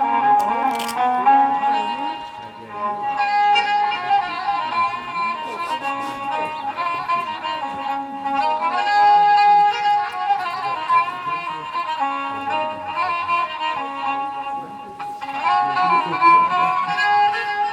Tehran Province, Tehran, مسیر راهپیمایی درکه - پلنگ چال، Iran - Hiking & Music